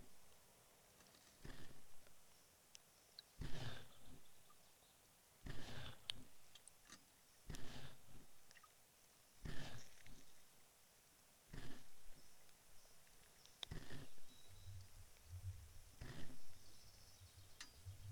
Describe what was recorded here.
contact microphones placed on the pontoon bridge